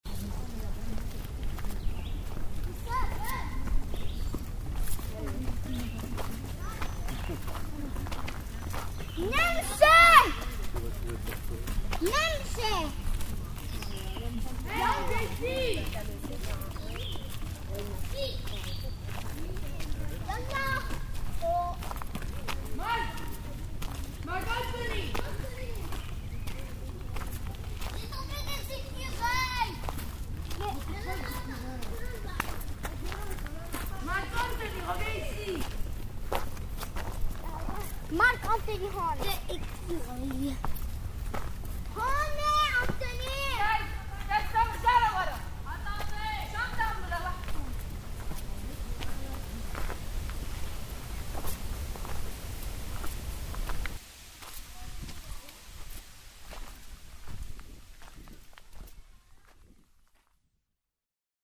equipment used: Edirol R-09
Cub scouts ascending Mont Royal
Montreal, QC, Canada, 18 June